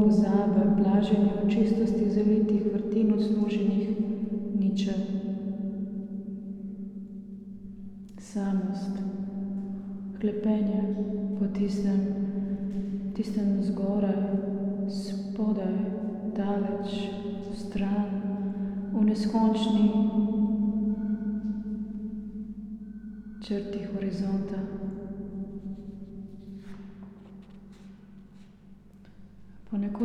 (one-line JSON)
{"title": "chamber cistern, wine cellar, Maribor - words, echo", "date": "2014-10-10 11:40:00", "latitude": "46.56", "longitude": "15.65", "altitude": "274", "timezone": "Europe/Ljubljana"}